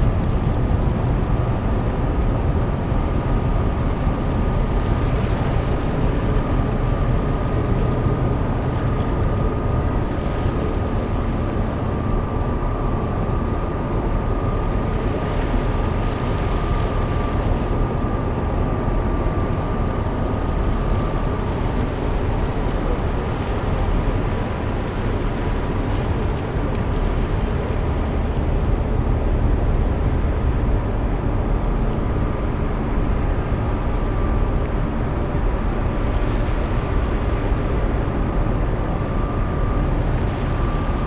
Arrival of the ferry at the Saint-Malo seaport
Nice weather, sunny, no wind, calm and quiet sea.
Recorded from the jetty with a H4n in stereo mode.
Motors from the ferry.
Machines from the ramp for passengers.
People passing by, adults and kids talking.
Ramp for passengers
Saint-Malo, France